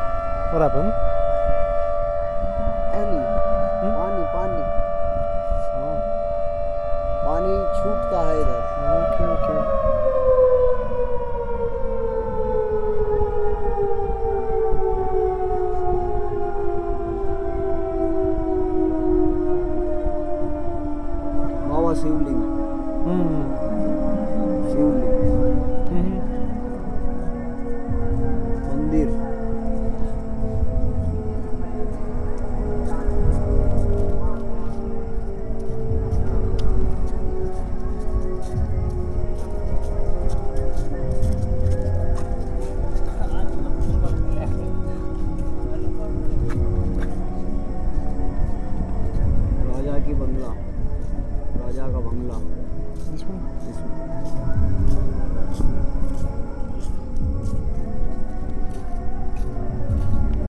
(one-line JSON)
{"title": "Omkareshwar, Madhya Pradesh, Inde - Water-dam warning siren", "date": "2015-10-10 22:57:00", "description": "The water-dam siren is part of the daily life in Omkareshwar.", "latitude": "22.25", "longitude": "76.15", "altitude": "173", "timezone": "Asia/Kolkata"}